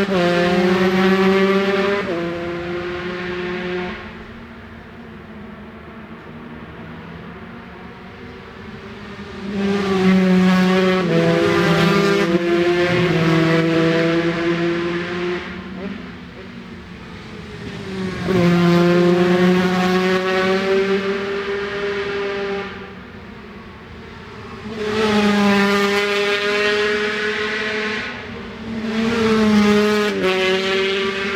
british superbikes 2002 ... 125 qualifying ... one point stereo to minidisk ...
England, United Kingdom, 15 June